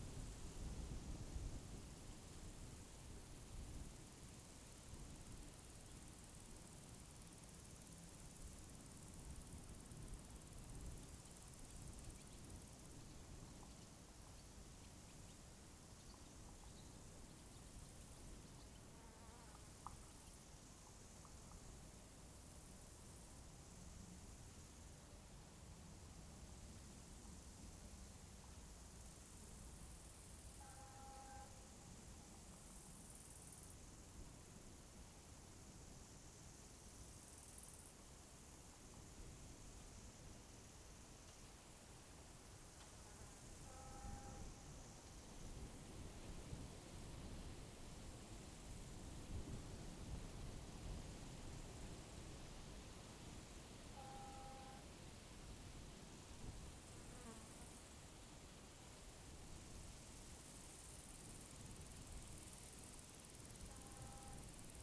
{
  "title": "Unnamed Road, Žalany, Česko - Field near Milešovka hill",
  "date": "2019-07-30 13:12:00",
  "description": "Summer field sounds. Birds, grasshoppers, wind blows. Airplane passes over.\nZoom H2n, 2CH, handheld.",
  "latitude": "50.56",
  "longitude": "13.93",
  "altitude": "543",
  "timezone": "Europe/Prague"
}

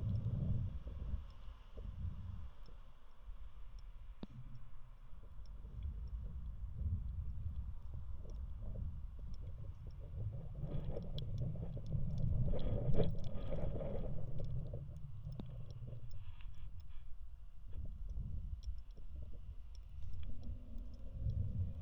{
  "title": "Rivierenbuurt-Zuid, Haag, Nederland - contact",
  "date": "2013-03-24 14:53:00",
  "description": "recorded using two contact microphones attached to one of the poles of the bridge",
  "latitude": "52.08",
  "longitude": "4.33",
  "altitude": "2",
  "timezone": "Europe/Amsterdam"
}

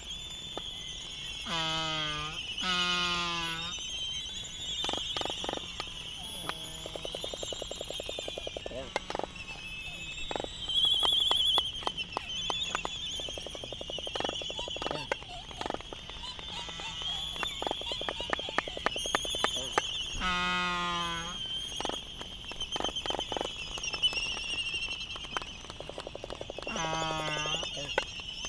{
  "title": "United States Minor Outlying Islands - Laysan albatross dancing ...",
  "date": "2012-03-13 19:03:00",
  "description": "Laysan dancing ... Sand Island ... Midway Atoll ... open lavaier mics on mini tripod ... background noise and voices ...",
  "latitude": "28.22",
  "longitude": "-177.38",
  "altitude": "9",
  "timezone": "GMT+1"
}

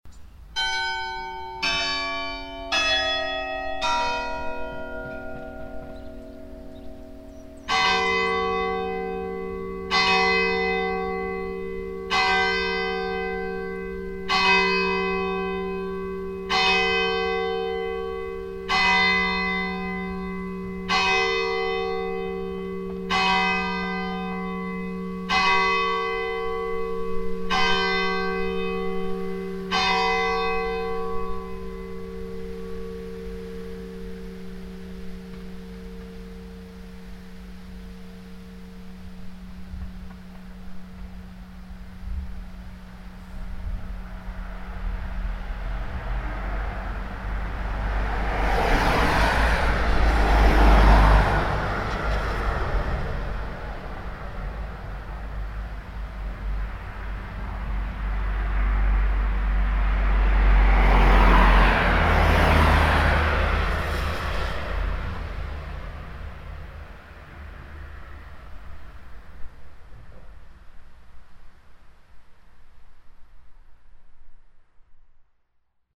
{
  "title": "marnach, church, bells",
  "date": "2011-09-13 17:46:00",
  "description": "At the Haaptstrooss in Marnach. The 11 o clock church bells and some cars passing by on the busy main road. Recorded on a windy summer morning.\nMarnach, Kirche, Glocken\nAuf der Haaptstrooss in Marnach. Die 11-Uhr-Glocke läutet und einige Autos fahren auf der verkehrsreichen Hauptstraße vorbei. Aufgenommen an einem windigen Sommermorgen.\nMarnach, église, cloches\nSur la Haaptstrooss à Marnach. Le carillon de 11h00 sonne et quelques voitures passent sur la route principale animée. Enregistré un matin d’été venteux.",
  "latitude": "50.05",
  "longitude": "6.06",
  "altitude": "518",
  "timezone": "Europe/Luxembourg"
}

